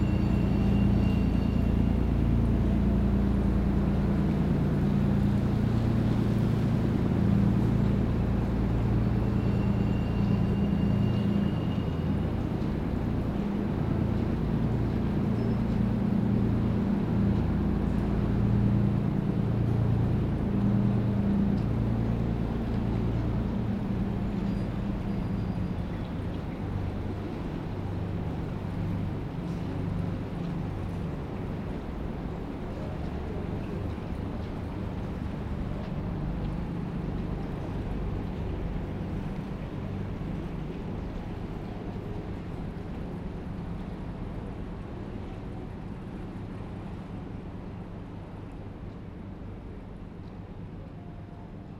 Namur, Belgique - Boat on the Sambre river
The container ship called Alain (IMO 226001470) is passing on the Sambre river.